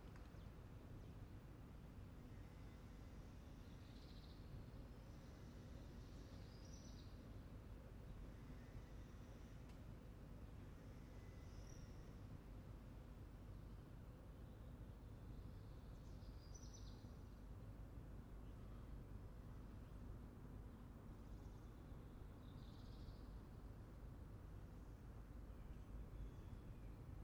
Avenue des Cordeliers, La Rochelle, France - P@ysage Sonore - Landscape - La Rochelle COVID Parking Cité administrative La Rochelle bell tower 8h
small traffic on Monday morning
8 am bell at 2'17 with tractor mower.....
4 x DPA 4022 dans 2 x CINELA COSI & rycote ORTF . Mix 2000 AETA . edirol R4pro
Nouvelle-Aquitaine, France métropolitaine, France, 27 April 2020, 07:57